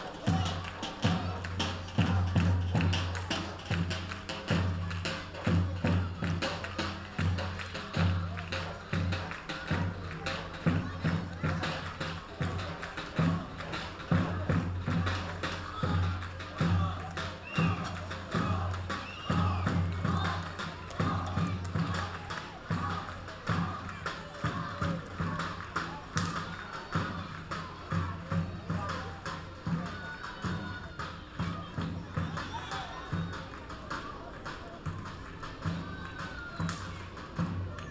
{
  "title": "Istanbul, Tarlabasi. - Young men celebrating call-up for military service",
  "date": "2009-08-18 20:07:00",
  "latitude": "41.04",
  "longitude": "28.98",
  "altitude": "47",
  "timezone": "Europe/Berlin"
}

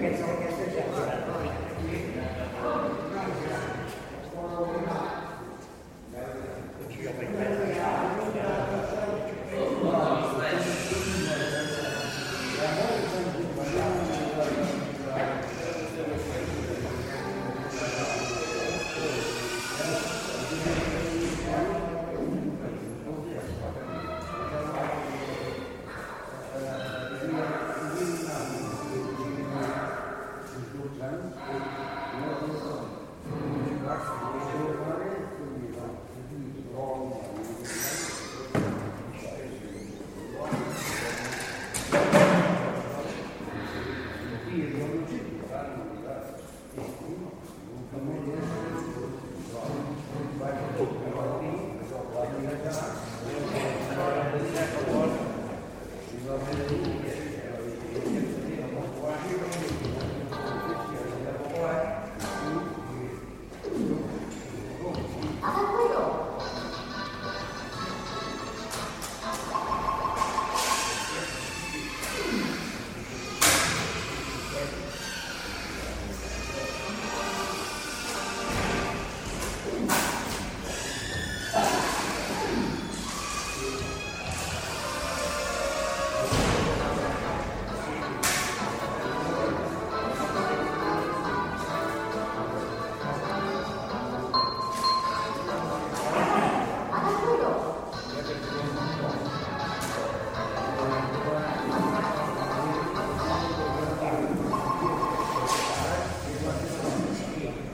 {
  "title": "Sant Feliu de Guíxols, Espagne - gran café",
  "date": "1997-02-09 10:05:00",
  "description": "This is a recording of the ambiance in a grand cafe at San Filiu de Guixols. In the first part we can hear voices filling the space, & almost feel them sounding with the woody matter of the room. In the second part some electronic sounds of a machine gradually invade the environment & the voices seem to be less at ease or even shut. This is to illustrate an aspect of the recent evolution of our sound environment.",
  "latitude": "41.78",
  "longitude": "3.03",
  "altitude": "13",
  "timezone": "Europe/Madrid"
}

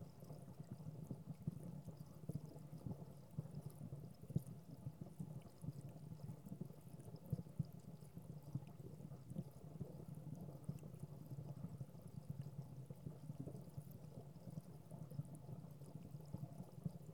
{
  "title": "Lake Shore East Park Underwater in Fountain",
  "date": "2017-06-08 14:00:00",
  "description": "Recorded on H4N and homemade hydrophone. This recording is underwater in the fountain pool. Directly above is one of the small tough waterfalls.",
  "latitude": "41.89",
  "longitude": "-87.62",
  "altitude": "199",
  "timezone": "America/Chicago"
}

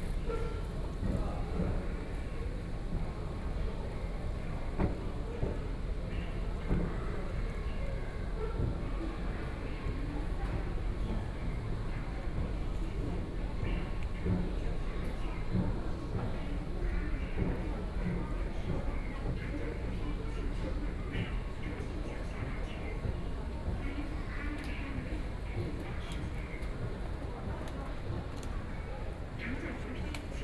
{
  "title": "East Nanjing Road Station, Shanghai - Towards the station exit",
  "date": "2013-12-03 15:20:00",
  "description": "From the station platform began to move toward the station exit, Binaural recording, Zoom H6+ Soundman OKM II",
  "latitude": "31.24",
  "longitude": "121.48",
  "altitude": "9",
  "timezone": "Asia/Shanghai"
}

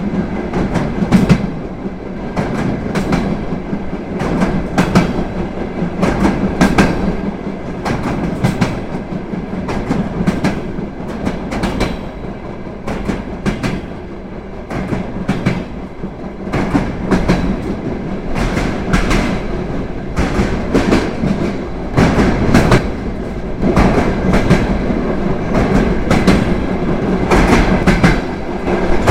{
  "title": "Bahn Kutaissi Tblissi",
  "date": "2010-09-07 17:39:00",
  "description": "Tunnel, Georgische Eisenbahn, Passstraße",
  "latitude": "41.94",
  "longitude": "44.33",
  "altitude": "530",
  "timezone": "Asia/Tbilisi"
}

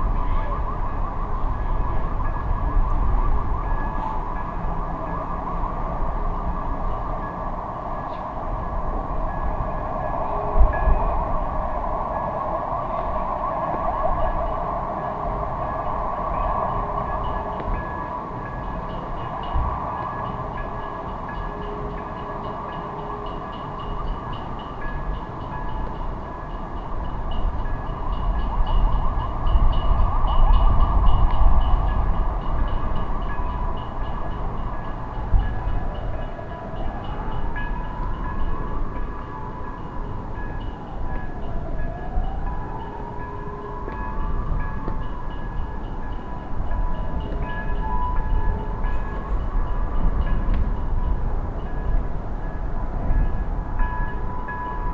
{
  "title": "Casimir Castle Hill, Przemyśl, Poland - (72 BI) Distant city with sirens and bells",
  "date": "2016-12-25 22:36:00",
  "description": "Binaural recording of city atmosphere on first Christmas Day.\nRecorded with Soundman OKM on Sony PCM D-100",
  "latitude": "49.78",
  "longitude": "22.77",
  "altitude": "260",
  "timezone": "Europe/Warsaw"
}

